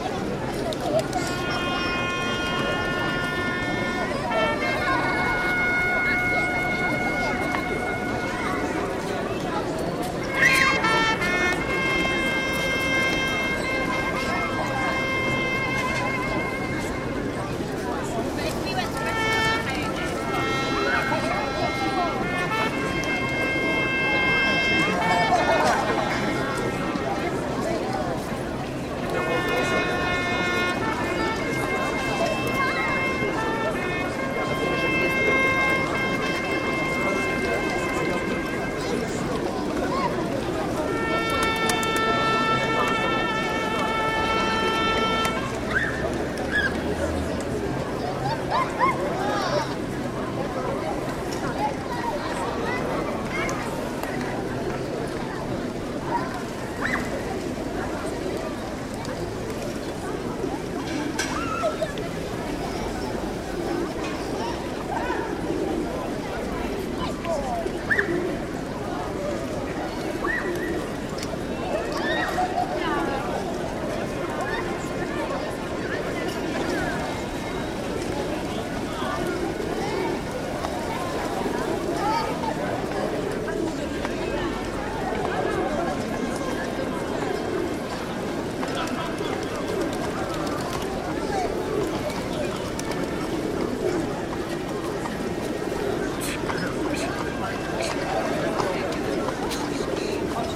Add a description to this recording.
Crowdy holliday afternoon on the historical square of Krakov. At six p.m, everyday, a trompet player blows 4 times (once towards each cardinal direction) from the top of the cathedral’s tower. If the one toward the square can be clearly heard, the three others get more or less lost in the crowd’s rumor, but yet not completely.